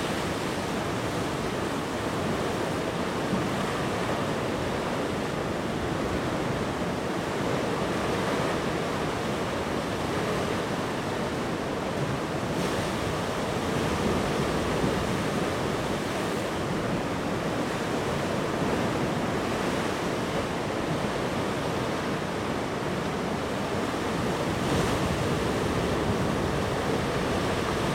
Yesnaby, Orkney - thpool1
Ocean tide at Orkney